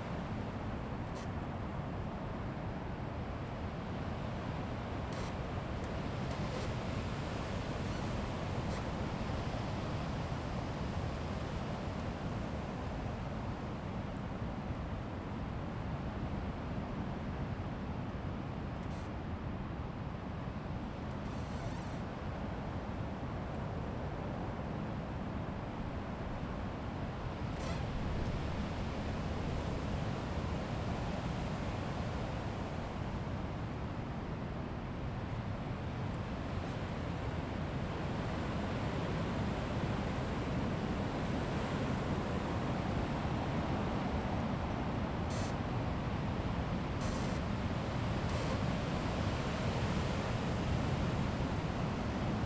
Ein kalte Wind blähst durch eine Baumgruppe.
November 1998
Fläsch, Switzerland, November 1998